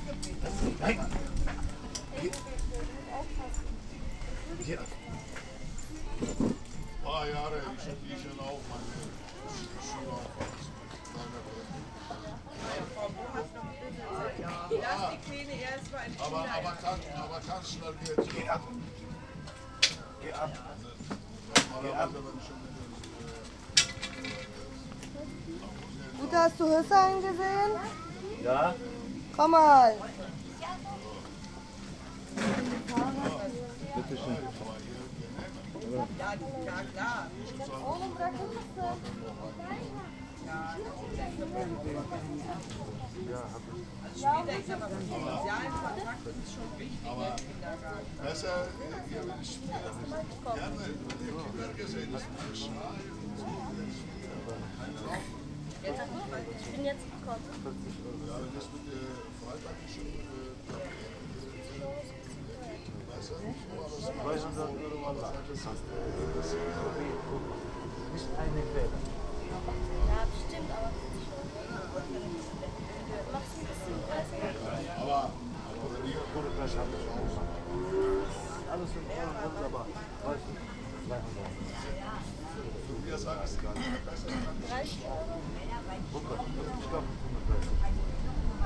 berlin, werbellinstraße: flohmarkt, imbiss - the city, the country & me: flea market, snack stall
venders and visitors of the flea market at a snack stall talking about family problems, haggling etc.
the city, the country & me: august 22, 2010
Berlin, Germany, 22 August